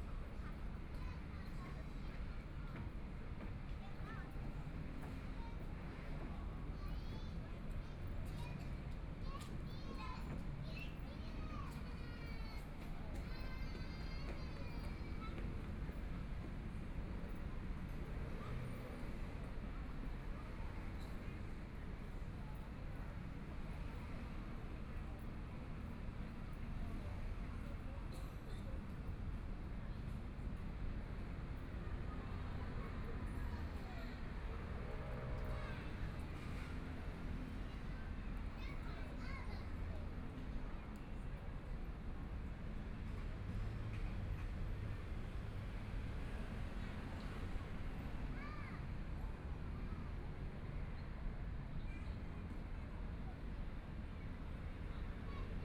YiTong Park, Taipei - Sitting in the park
Children and adults, Environmental sounds, Motorcycle sound, Traffic Sound, Binaural recordings, Zoom H4n+ Soundman OKM II
Taipei City, Taiwan